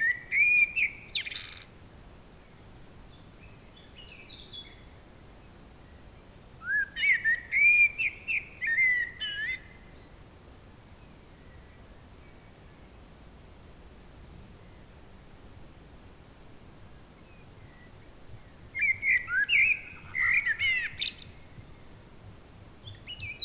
La Grande Serve, La Chabanne - peaceful birds singing
a moment of tranquility. hissing trees and singing birds announcing sunset. theres also a dog and a horse nearby.